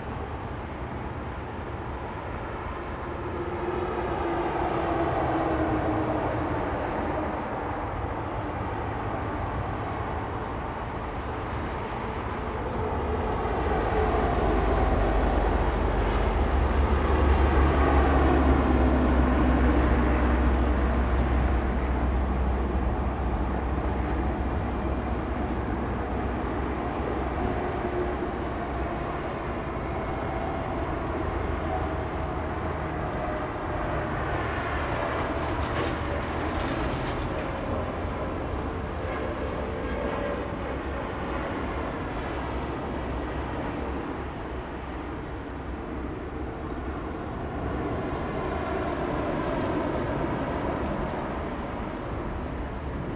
Provincial Highway, Linkou Dist., New Taipei City - highway
highway, Traffic sound
Zoom H2n MS+XY
New Taipei City, Linkou District, 西部濱海公路6000號, 4 January